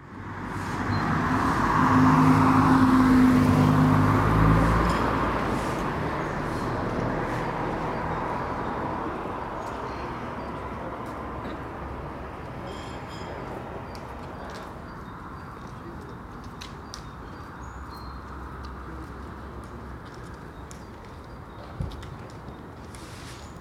Contención Island Day 43 outer west - Walking to the sounds of Contención Island Day 43 Tuesday February 16th
The Drive Westfield Drive Oakfield Road Kenton Road Elmfield Road
The road bounded
by utility services roadworks
traffic stops and starts
A boy on his bike
pedalling in his superhero wellies
A man walks with two poles
a woman runs by